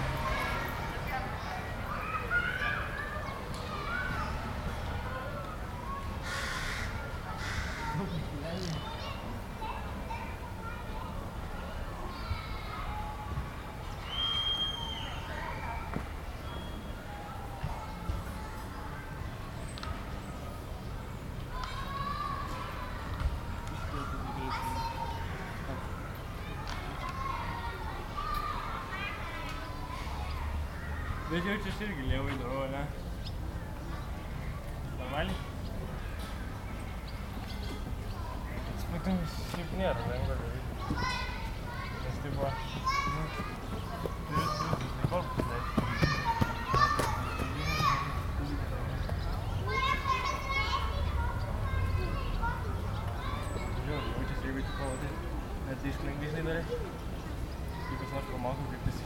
Nida, Lithuania - Playground near the lagoon
Recordist: Liviu Ispas. Recorded in a children's playground. Kids playing, birds, cars in the distance, two teenagers talking close by and someone laughing at the end. Recorded with ZOOM H2N Handy Recorder.